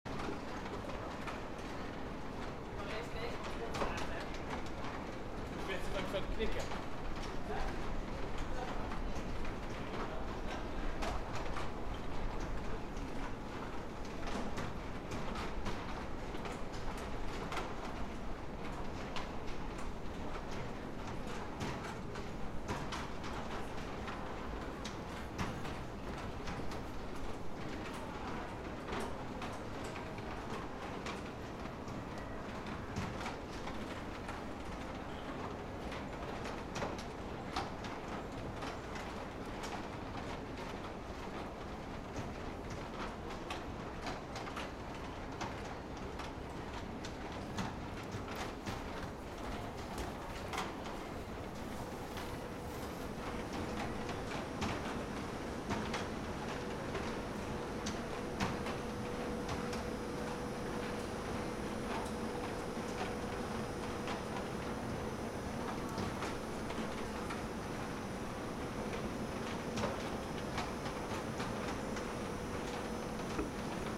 Escalator, Deak Ferenc Ter, Budapest - Escalator, Deak Ferenc Ter
The old escalators to the metro in Budapest are quite fast. The recording makes the escalator down to the minus one level audible, steps, voices.